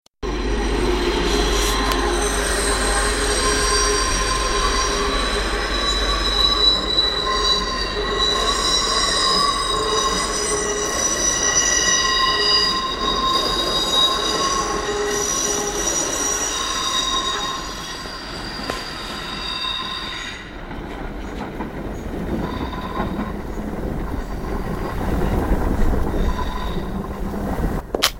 14 wird nicht mehr gebraucht